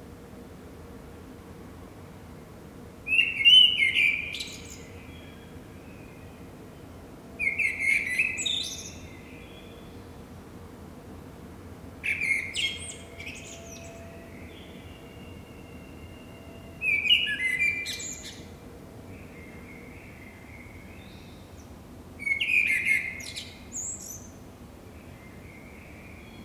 I lived here for 15 yearsand one morning i woke up with this beautifull blackbird from the tree behind the house
Noord-Holland, Nederland